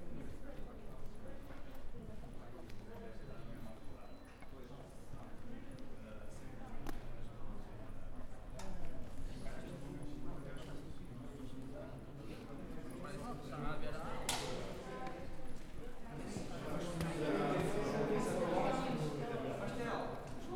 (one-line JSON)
{"title": "ESAD.CR, Caldas da Rainha - Walk: ESAD.CR---Casa Bernardo", "date": "2014-02-25 14:00:00", "description": "Walk from ESAD.CR to Casa Bernardo\nRecorded w/ Zoom H4n.", "latitude": "39.39", "longitude": "-9.14", "timezone": "Europe/Lisbon"}